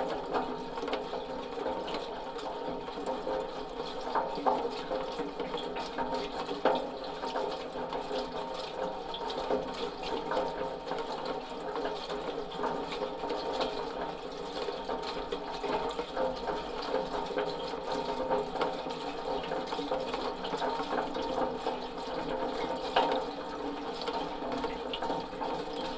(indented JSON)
{
  "title": "Mont-Saint-Guibert, Belgique - The gutter",
  "date": "2016-05-27 21:50:00",
  "description": "It's raining since a long time. We are here at the town hall and the library. A gutter is making strange noises with the rain. This is recorded with two contact microphones sticked on each side of the gutter.",
  "latitude": "50.64",
  "longitude": "4.61",
  "altitude": "107",
  "timezone": "Europe/Brussels"
}